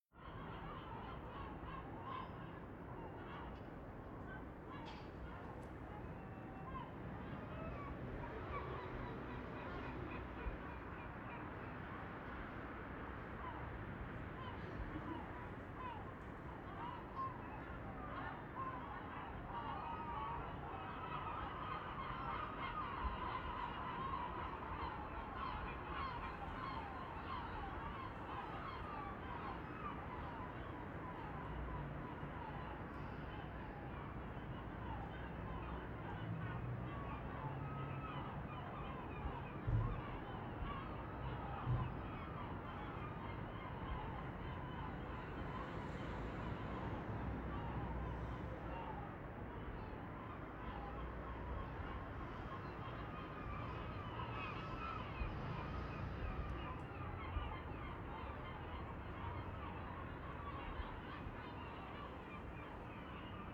Endoume, Marseille, France - Vallon des Auffes
Seagulls at twilight - ORTF with Okatava mics
By FSS Crew: Clément Lemariey & jérome Noirot- SATIS University of Provence